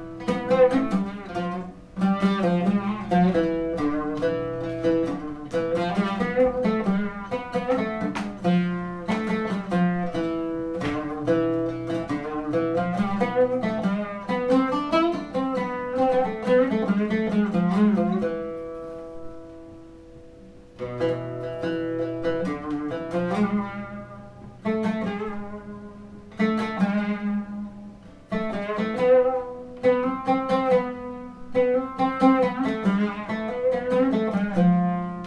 neoscenes: ethernet orchestra performance ambiance
Marrickville New South Wales, Australia